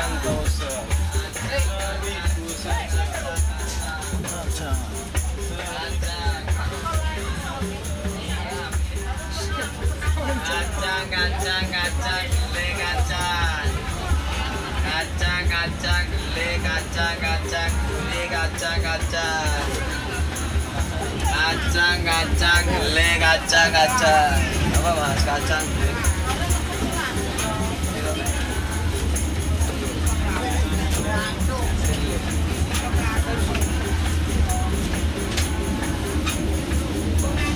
Rail line, Jl. Raya Gelam, Gelam, Kec. Candi, Kabupaten Sidoarjo, Jawa Timur, Indonésie - Lowcost Train from Surabaya to Probolinggo
Peanuts Vendors, musicians (with Upright Bass and percussions ..) getting on the train beetween 2 stations.